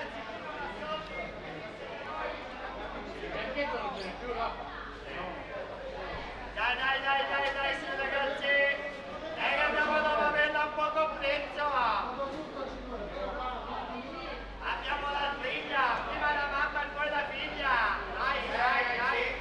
Mercato ortofrutticolo coperto, Piazza della Repubblica, Torino TO, Italie - Turin - Marché couvert aux poissons
Turin - Italie
Ambiance au marché couvert aux poissons